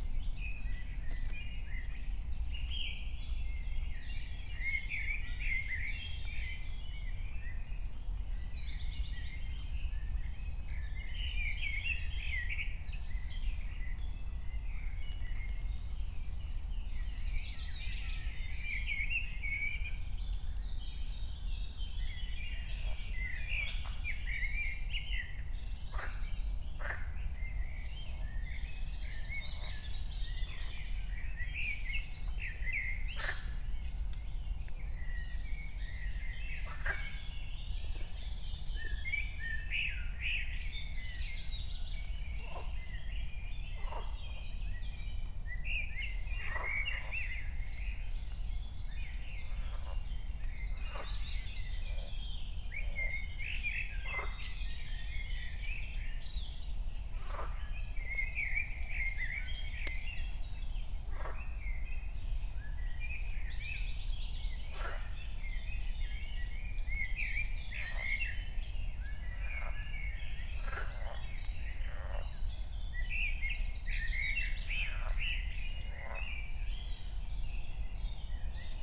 Königsheide, Berlin - forest ambience at the pond
6:00 drone, cars, s-bahn trains, frogs, more birds, some bathing
Deutschland, 23 May 2020